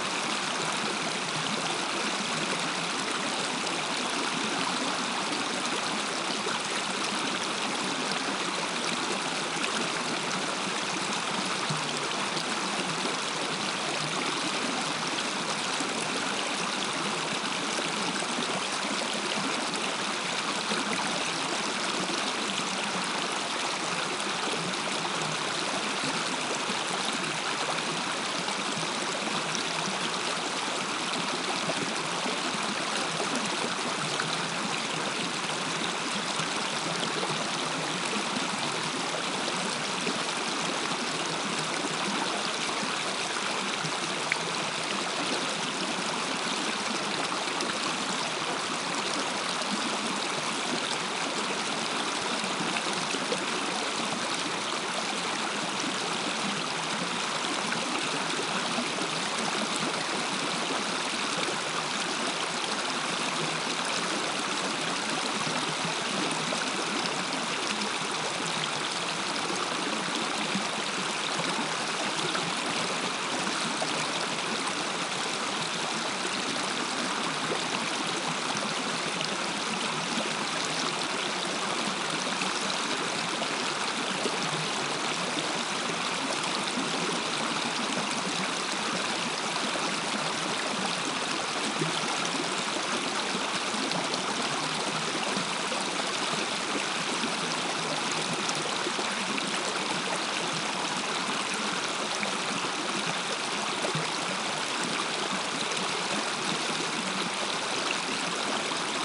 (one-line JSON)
{"title": "Strawberry Park Natural Hot Springs, CO, USA - Hot Spring Creek", "date": "2016-01-03 10:08:00", "description": "Recorded with a pair of DPA 4060s into a Marantz PMD661.", "latitude": "40.56", "longitude": "-106.85", "altitude": "2294", "timezone": "America/Denver"}